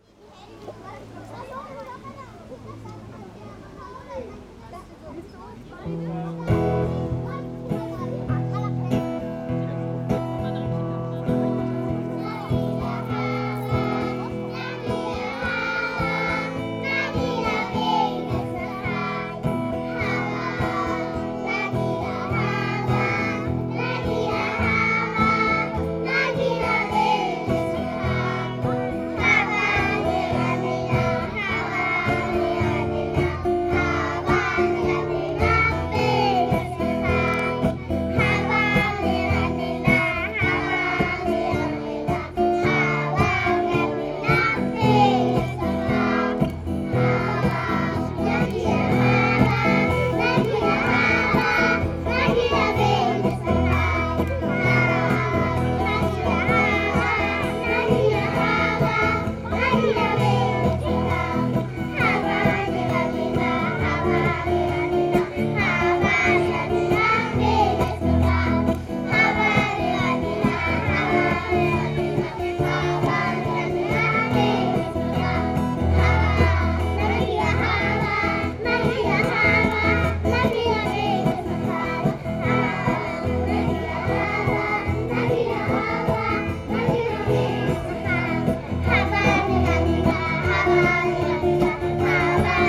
Berlin, Urbanstr., Nachbarschaftshaus - Sommerfest, kids choir
sommerfest (summerparty), kindergaren choir performing, kids of age 2-5
Berlin, Germany